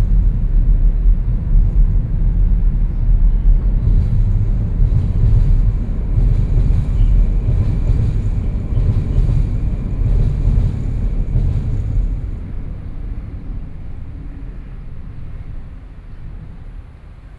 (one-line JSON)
{"title": "北投區大同里, Taipei City - below the track", "date": "2014-07-18 18:08:00", "description": "Traffic Sound, Sitting below the track, MRT train passes\nSony PCM D50+ Soundman OKM II", "latitude": "25.13", "longitude": "121.50", "altitude": "10", "timezone": "Asia/Taipei"}